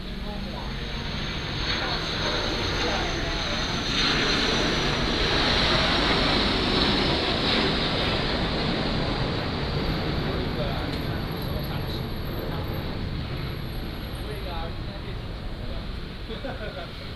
Fuji Rd., Hualien City - Fighter and Traffic Sound
Fighter and Traffic Sound